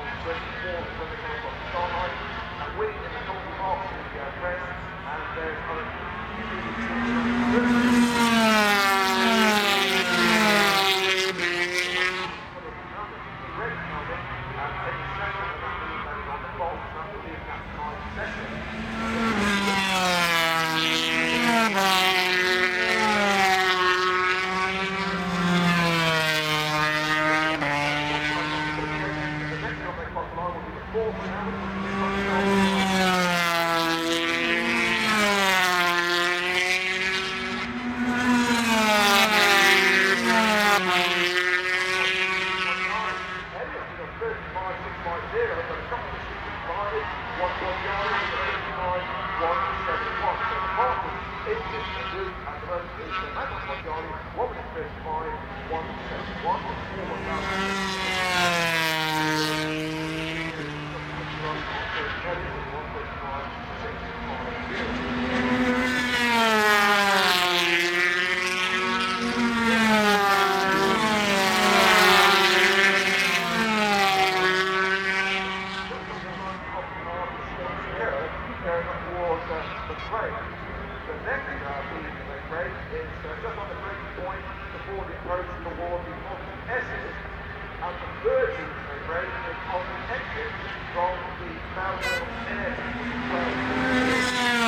Castle Donington, UK - British Motorcycle Grand Prix 2003 ... 250 ...
250cc warm up ... Starkeys ... Donington Park ... warm up and associated sounds ... Sony ECM 959 one point stereo mic to Sony Minidisk ...